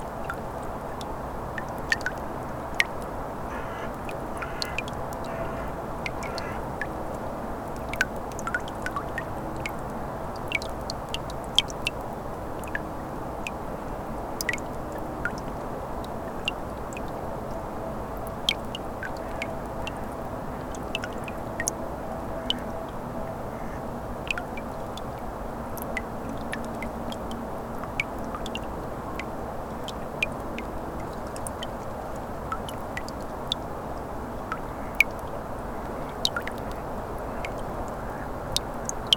Kaunas, Lithuania - Melting ice plates dripping
Water dripping from the melting ice plates on the shore of Neris river. Recorded with ZOOM H5.
Kauno apskritis, Lietuva, 23 February 2021, 17:54